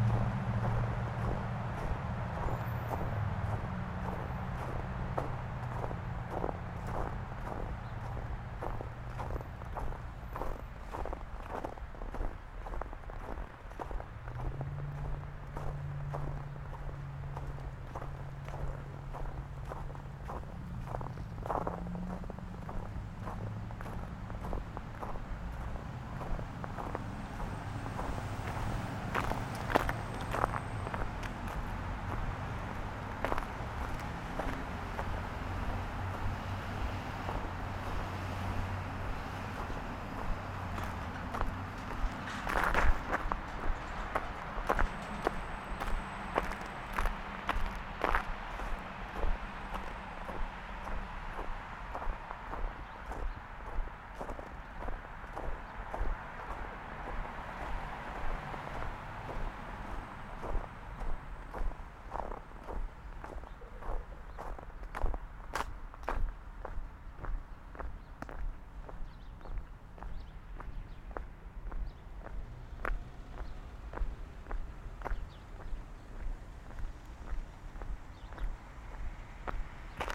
Carpenter Ave, Newburgh, NY, USA - Walking Downing Park
Morning walk through Downing Park in the snow on my way to the grocery store. Zoom F1 w/ XYH-6 Stereo Mic
New York, United States, 29 January 2021, 09:40